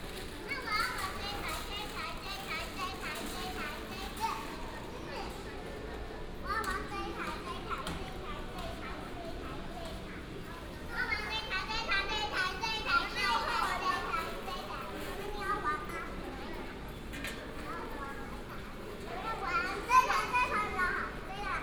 斗六火車站, Douliu City - walking in the Station
walking in the Station